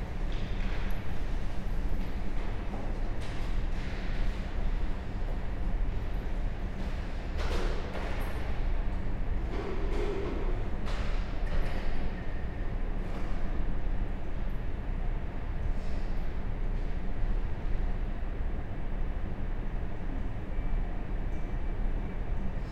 Valpy St, Reading, UK - JM Art Gallery, Reading Museum
Ten minute meditation in the John Madjeski Art Gallery at Reading Museum. School children chat in the room next door then begin to leave, a member of museum staff sits in silence, glued to her iPad, until a visitor arrives and asks questions (spaced pair of Sennheiser 8020s with SD MixPre6)